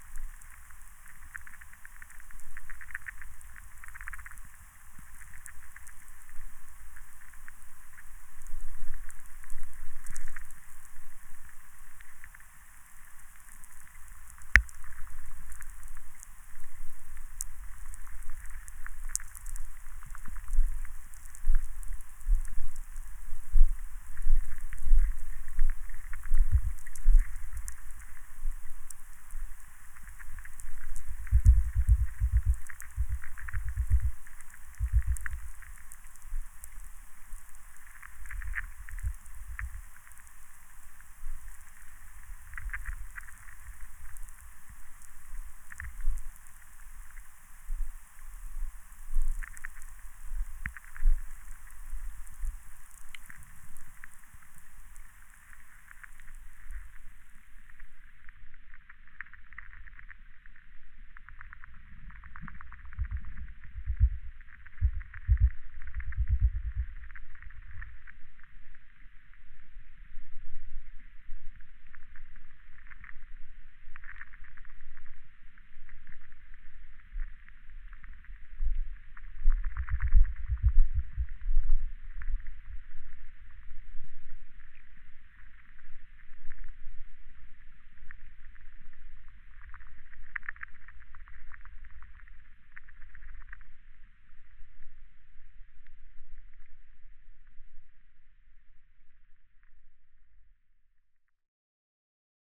river Sventoji, Lithuania, underwater and atmospheric VLF
hidden sounds at/in river Sventoji. underwater captured with hydrophone and atmospheric electricity captured with VLF receiver